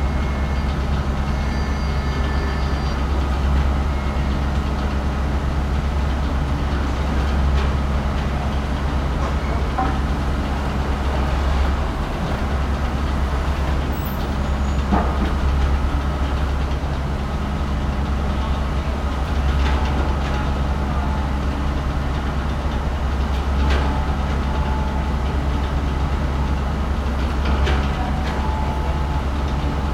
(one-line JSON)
{"title": "Ivan Cankar, Maribor - music of heavy machinery", "date": "2014-07-30 13:26:00", "latitude": "46.56", "longitude": "15.65", "altitude": "279", "timezone": "Europe/Ljubljana"}